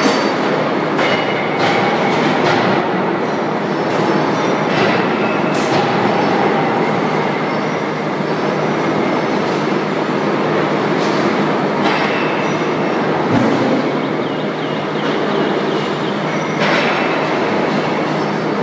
Kuźnia Polska, ul. Górecka, Skoczów, Pogórze, Poland - Heavy Metal Forge Factory
Souds of Forge Factory arond and inside. Recordded on iPhone 5.